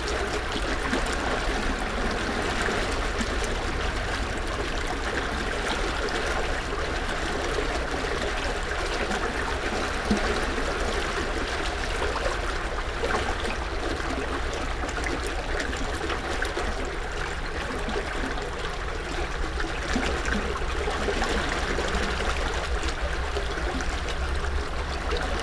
canal, Drava river, Zrkovci, Slovenia - under small bridge